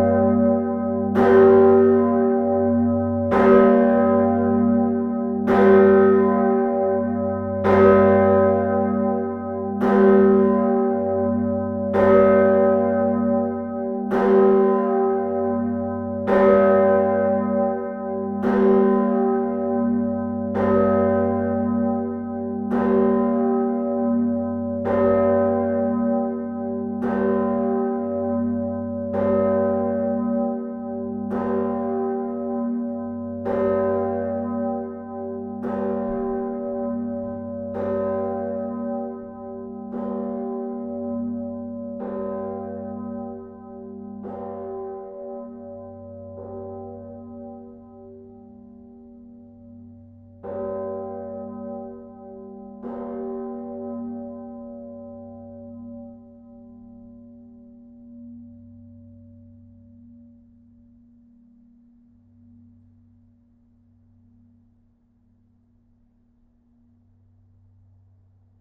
Bruxelles, Belgique - Brussels big bell
The Brussels big bell, called Salvator.
This is a 1638 bell made by the bellfounder Peeter Vanden Gheyn.
The ringing system is very old. Renovating it would be a must.
We ringed Salvator manually the 11/11/11 at 11h11.
Thanks to Thibaut Boudart welcoming us !
Bruxelles, Belgium, 11 November 2011, ~11:00